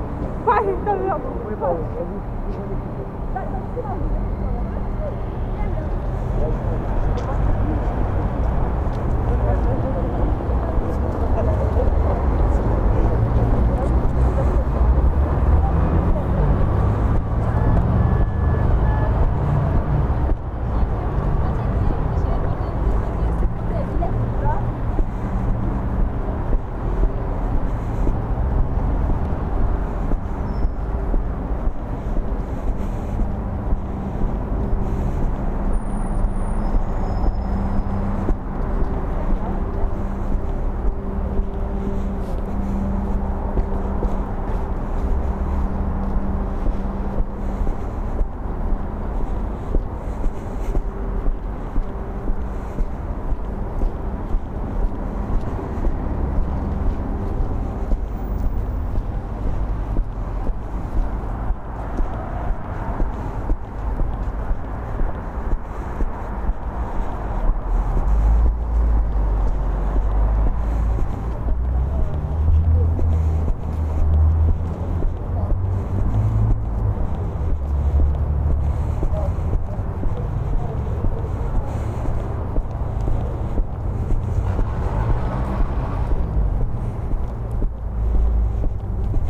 Soundwalk from canteeen at Dąbrowszczaków street, down to Piłsudskiego alley. Pedestrian crossing near city hall. Entrance to Alfa shopping center. Walk through shopping mall. Return the same way.
województwo warmińsko-mazurskie, Polska, European Union, 1 March 2013, 17:36